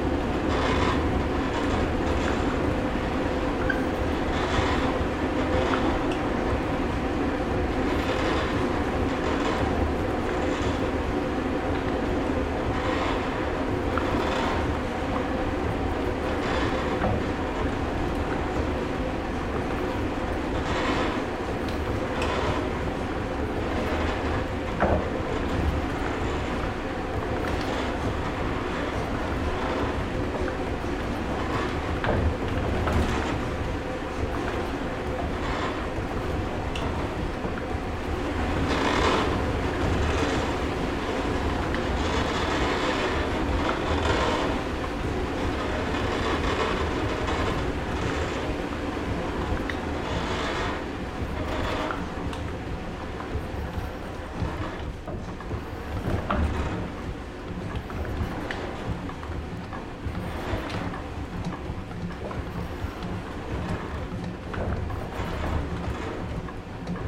Parc de la Tourelle, Achicourt, France - Moulin d'achicourt
Achicourt (Pas-de-Calais)
Au moulin de la Tourelle, on moud encore la farine "à l'ancienne"
28 June, 09:00